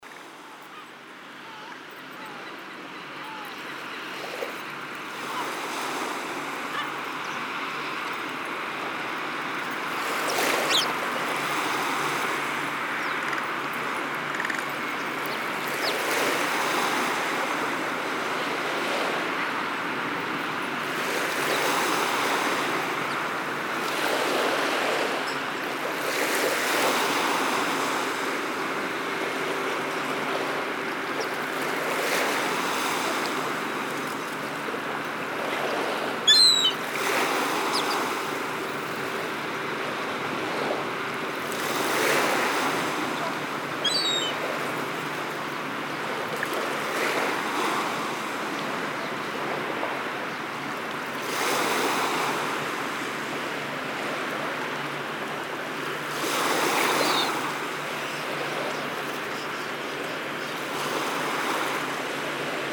Bostanci emre yücelen sound recording binaural seagul seabirds crows waves relaxation